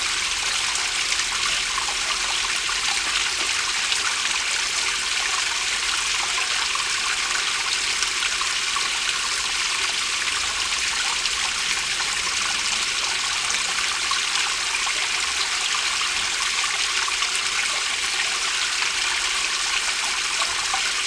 Lavoir Saint Léonard à Honfleur (Calvados)
Honfleur, France, 18 February 2011